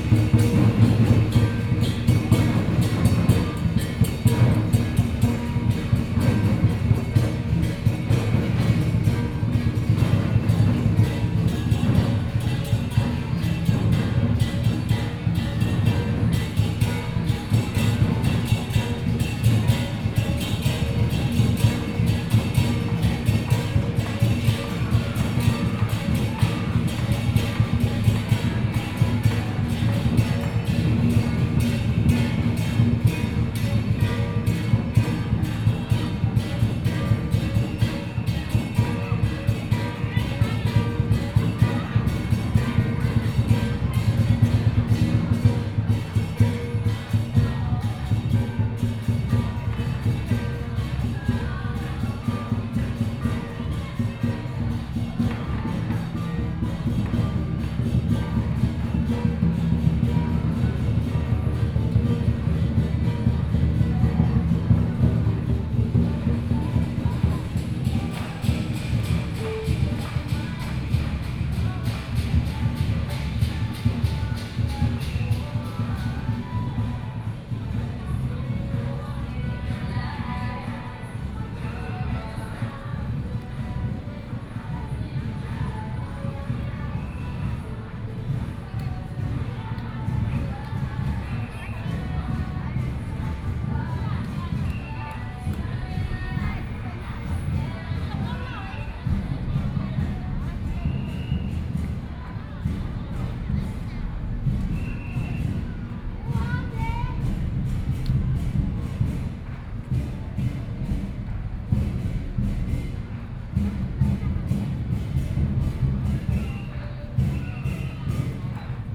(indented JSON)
{
  "title": "Daye Rd., Beitou Dist. - festival",
  "date": "2013-11-03 13:22:00",
  "description": "Community Carnival festival, Eastern traditional temple percussion performances form, Western-style combat performance teams",
  "latitude": "25.14",
  "longitude": "121.50",
  "altitude": "17",
  "timezone": "Asia/Taipei"
}